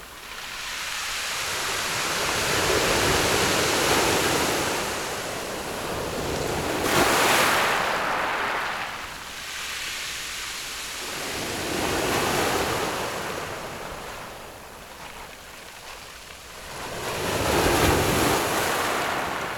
內埤灣, Su'ao Township - Sound of the waves
Sound of the waves, At the beach
Zoom H6 MS+ Rode NT4